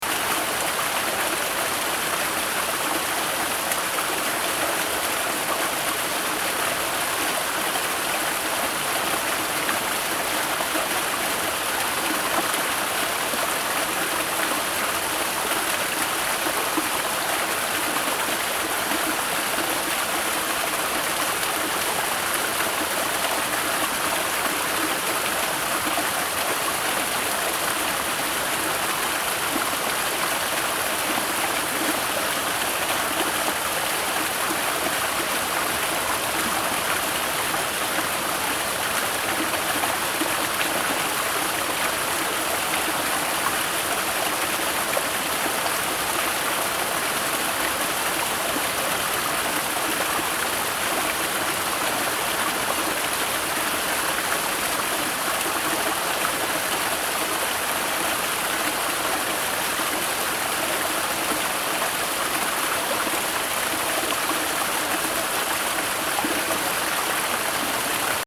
Fermignano PU, Italia - Torrente
Ho usato uno Zoom H2n con il filtro antivento nuovo di pacca.